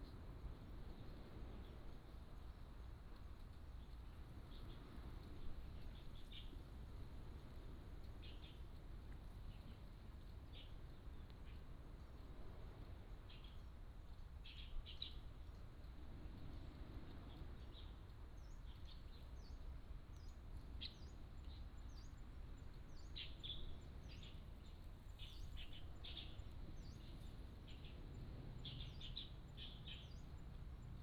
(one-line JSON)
{"title": "大澳山戰爭和平紀念公園, Beigan Township - Birds singing", "date": "2014-10-15 13:37:00", "description": "Birds singing, Sound of the waves", "latitude": "26.22", "longitude": "120.01", "altitude": "39", "timezone": "Asia/Taipei"}